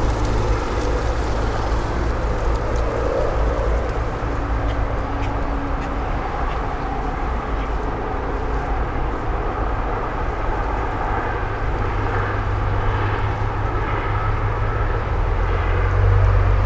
Olympus LS-P4 and LOM Usis, mounted in a tree. You can hear cicadas, grackles, water frogs, leaves in the wind, and marshlands, drowned out by the din of the nearby freeway intersection.